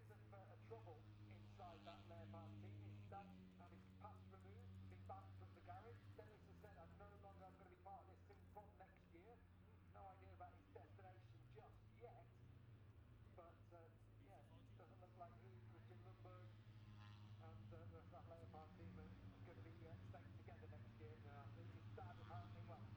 Silverstone Circuit, Towcester, UK - british motorcycle grand prix 2021 ... moto three ...
moto three free practice two ... maggotts ... dpa 4060s to Zoom H5 ...